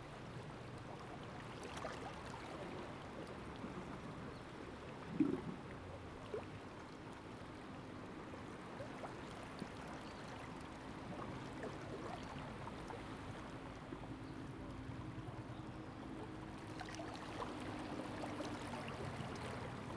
椰油村, Koto island - Sound tide
Small port, Sound tide
Zoom H2n MS +XY